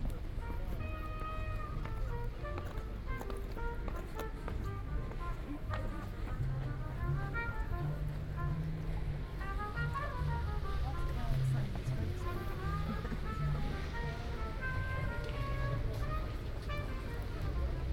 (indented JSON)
{
  "title": "Unnamed Road, Folkestone, Regno Unito - GG Folkestone-Harbour-B 190524-h14-10",
  "date": "2019-05-24 14:10:00",
  "description": "Total time about 36 min: recording divided in 4 sections: A, B, C, D. Here is the second: B.",
  "latitude": "51.08",
  "longitude": "1.19",
  "altitude": "8",
  "timezone": "Europe/London"
}